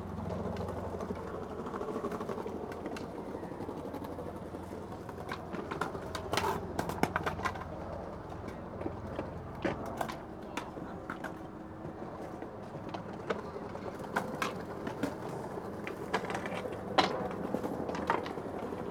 {"title": "Tempelhofer Park, Berlin, Deutschland - skater practising", "date": "2019-10-20 15:45:00", "description": "Sunday afternoon, former Tempelhof airport, skaters practising\n(Sony PCM D50)", "latitude": "52.47", "longitude": "13.41", "altitude": "46", "timezone": "Europe/Berlin"}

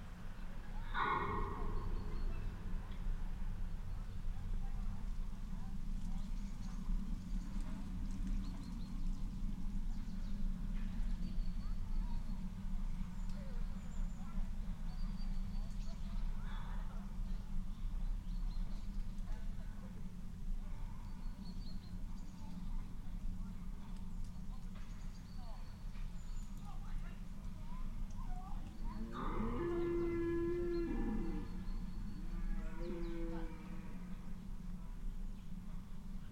{"title": "Bunny Valley, Lithuania, deer fence", "date": "2018-10-19 14:25:00", "description": "Small omnis and contact mic on a fence quarding deers", "latitude": "55.36", "longitude": "25.82", "altitude": "177", "timezone": "Europe/Vilnius"}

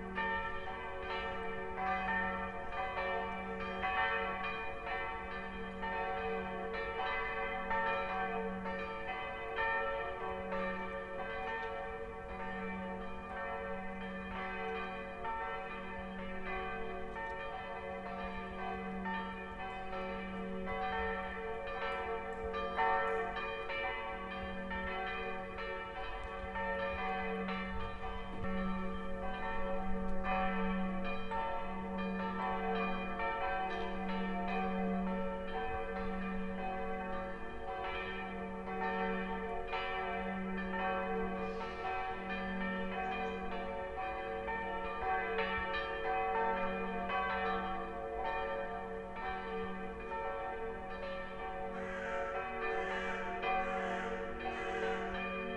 {"title": "Vereinsgasse, Wien, Австрия - Bell", "date": "2013-11-10 09:07:00", "description": "Bell Sound recorded on a portable recorder Zoom h4n", "latitude": "48.22", "longitude": "16.38", "altitude": "164", "timezone": "Europe/Vienna"}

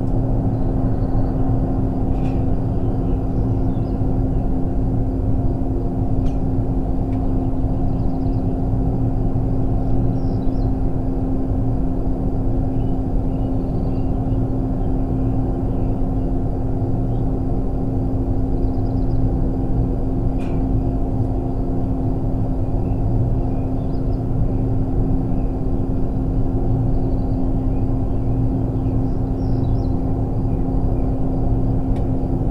{"title": "Kidricevo, Slovenia - inside factory gutter", "date": "2012-06-18 19:57:00", "description": "again just a few meters away, this recording was made with a pair of miniature omnidirectional microphones placed inside a large enclosed drainpipe running the whole height of the building.", "latitude": "46.39", "longitude": "15.79", "altitude": "239", "timezone": "Europe/Ljubljana"}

